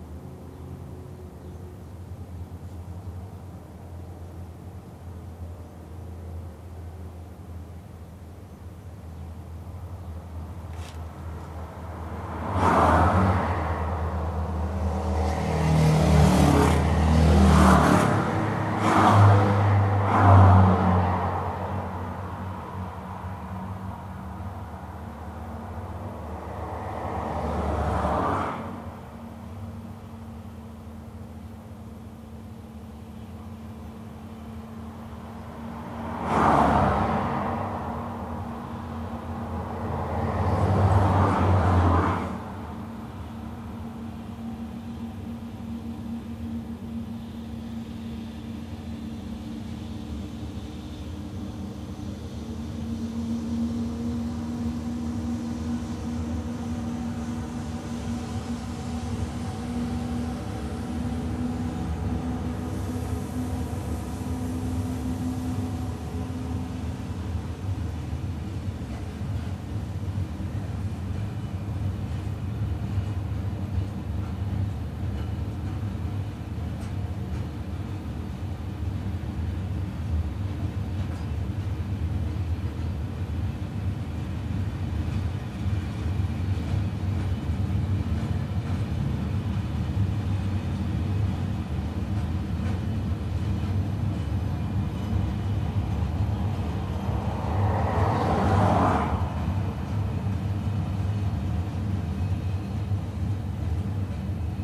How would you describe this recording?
Minidisc recording from 1999. Tech Note : Sony ECM-MS907 -> Minidisc recording.